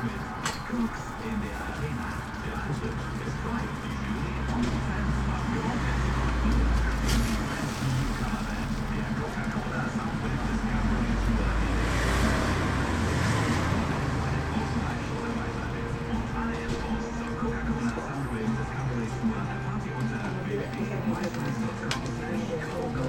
berlin, bürknerstraße: fassadenarbeiten - the city, the country & me: facade works
arbeiter auf gerüst hören radio
workers on scaffold listen to the radio
the city, the country & me: april 7, 2009